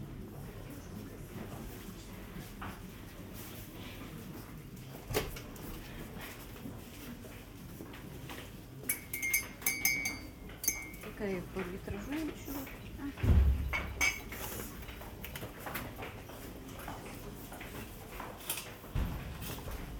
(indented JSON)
{
  "title": "Severodvinsk, Russia - shop TSIRKULNY",
  "date": "2013-01-06 16:06:00",
  "description": "shop TSIRKULNY.\nМагазин \"Циркульный\", атмосфера.",
  "latitude": "64.55",
  "longitude": "39.78",
  "altitude": "8",
  "timezone": "Europe/Moscow"
}